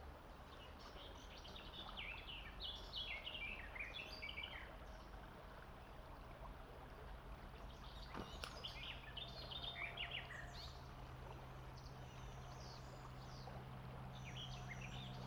Around Noon on a hot day in a shady spot by the river Tauber. Recorded with an Olympus LS 12 Recorder using the built-in microphones .Recorder was placed underneath the bike-path-bridge. The soft murmur of the river can be heard and numerous birds singing and calling. Bicycles and small motorbikes passing over the bridge. Bikers talking. A local train passing by on the railway next to the river. In the end a person with a dog appears to cool down in the shallow water.
Main-Tauber-Kreis, Baden-Württemberg, Deutschland, 18 June 2021